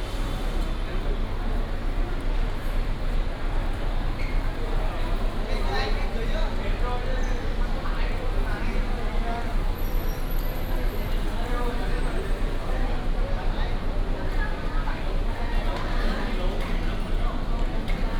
桃園客運總站, Taoyuan City - In the passenger station hall
In the passenger station hall, At the passenger terminal
Binaural recordings, Sony PCM D100+ Soundman OKM II
Taoyuan District, Taoyuan City, Taiwan, 17 February 2018, 11:52